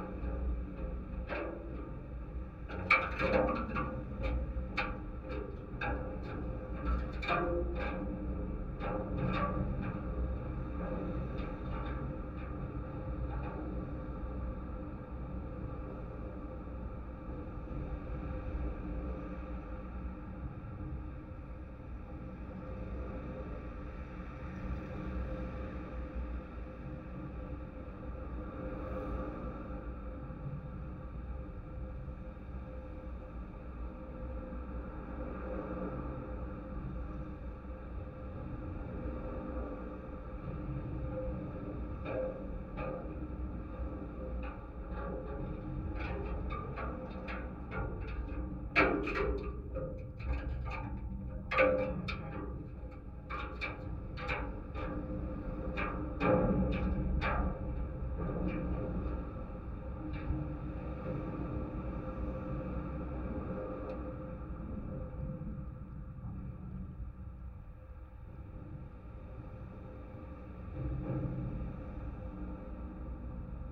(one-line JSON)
{"title": "Kaliningrad, Russia, auto and railway bridge", "date": "2019-06-08 19:00:00", "description": "contact microphones on auto and railway bridge constructions...and I was asked by security what I am doing here....", "latitude": "54.71", "longitude": "20.49", "altitude": "1", "timezone": "Europe/Kaliningrad"}